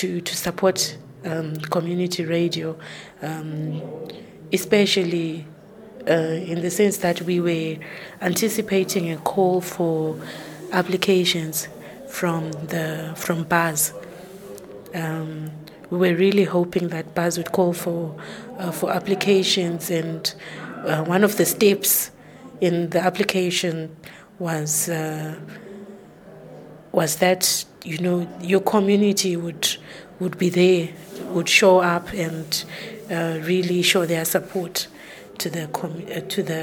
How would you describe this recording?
Sharon Sithole, working in the advocacy office of Radio Dialogue, tells how the women participate in the community radio’s activities locally.